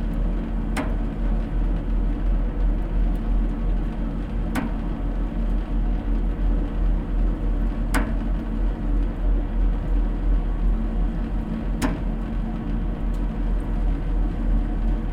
Woodbridge, UK - agricultural hose reel irrigation system
water stressed irrigation of potatoes in Suffolk using agricultural hose reel computer programmable system pumping water via leaky hose connection points.
Marantz PMD620
England, United Kingdom, May 2022